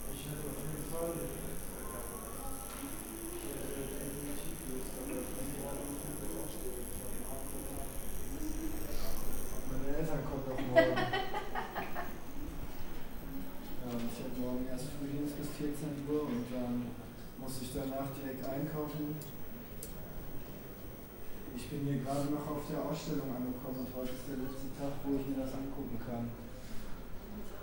09.10.2010 Markthale - exhibition
walking around a art exhibition around the markthale
Berlin, Germany